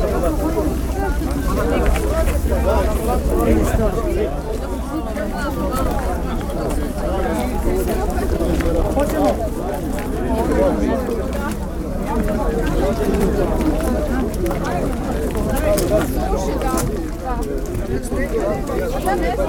Benkovac, Benkovački sajam, Kroatien - Walk over the fair
Benkovacki sajam is an open air trade fair close to Benkovac. It takes place on the 10th of every month and is said to be the biggest in Dalmatia with thousends of visitors. You can buy vegetables and fruits, car tires, furniture, tools, pottery, homemade products, clothes, chicken, pigs, dogs, cattle ... A great possibility for the people to share news and meet each other.
Croatia, 10 October 2013, ~11:00